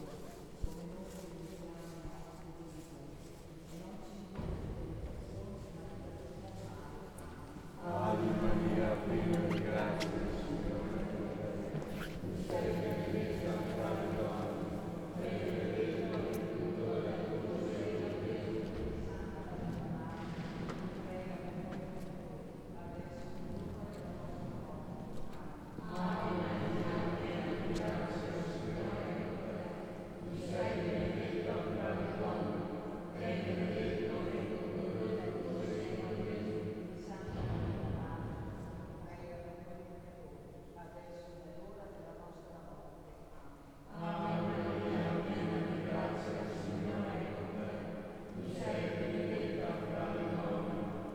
Cannaregio, Venice, Itálie - Campo San Marcuola
Liturgy in the San Marcuola on Easter and accordeonist in front of the Church
Venezia, Italy, 22 March